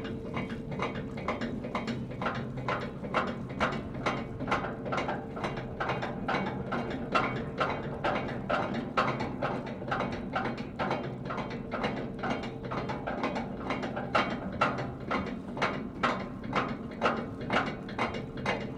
United Kingdom - King Harry Ferry
King Harry Ferry travelling across the river Fal
OKTAVA M/S + Cheap D.I.Y Contact Mic
Cornwall, UK